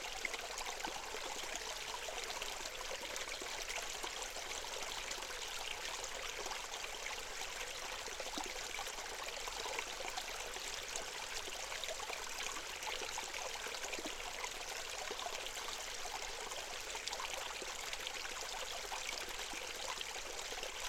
Lithuania, little stramlet at Artmaniskis mound
surely, this newly discovered ancient Artmaniskis mound becomes my favourite place. it is unreachable by cars, so for this reason it is somekind "in wilderness"
Utenos rajono savivaldybė, Utenos apskritis, Lietuva, 25 February 2020